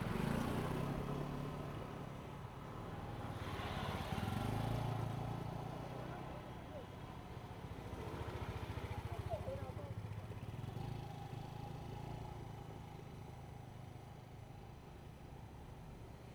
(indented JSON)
{
  "title": "中山東路一段223巷129弄, Zhongli Dist. - The train runs through",
  "date": "2017-08-20 17:26:00",
  "description": "in the Railroad Crossing, Traffic sound, The train runs through\nZoom H2n MS+XY",
  "latitude": "24.96",
  "longitude": "121.24",
  "altitude": "138",
  "timezone": "Asia/Taipei"
}